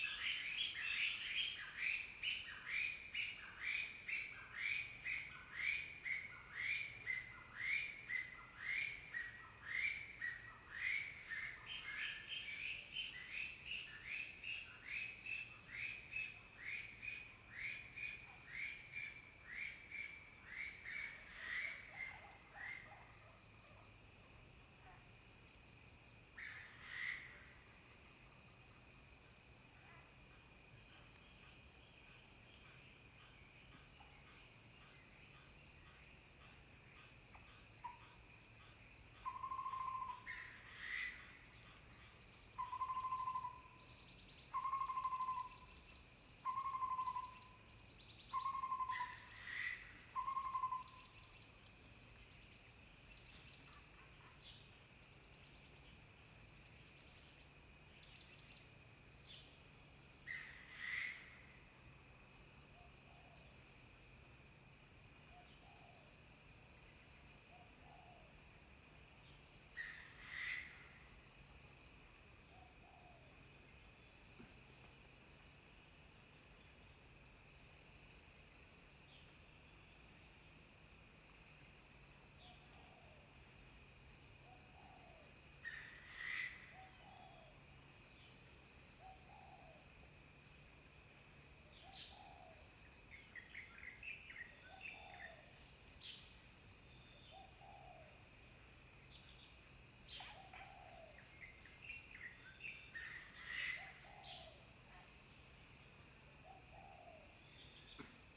種瓜路, 桃米里 Puli Township - For woods
For woods, Bird sounds
Zoom H2n MS+XY
Nantou County, Taiwan, 25 April